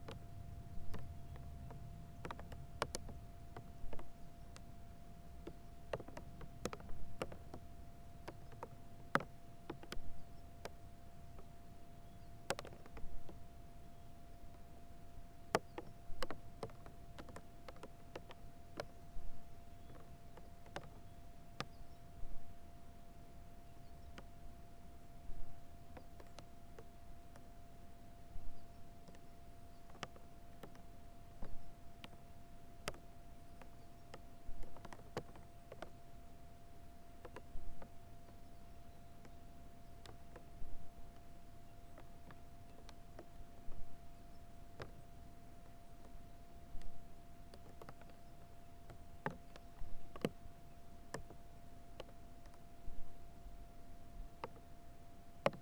neoscenes: corn snow on hat
Toano Mountains, NV, USA, 22 April 2010, ~14:00